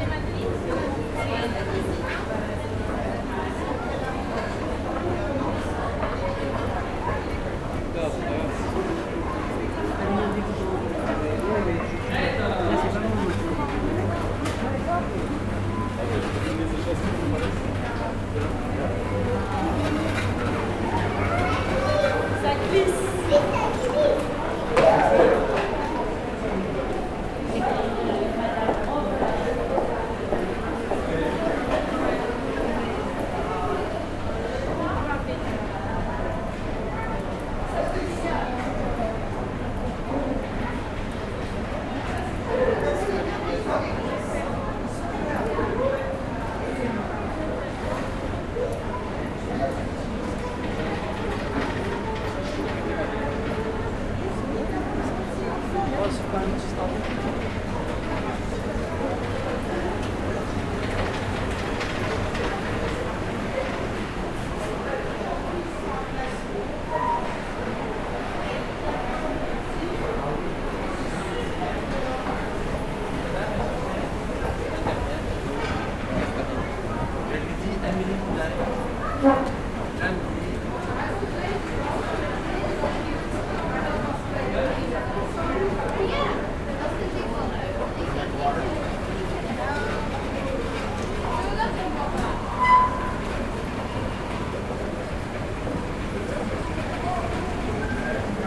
Taking the 'outside' escalator which ascends the 6 floors of the Centre Pompidou, Paris.
Paris, France, 24 July 2010, 15:30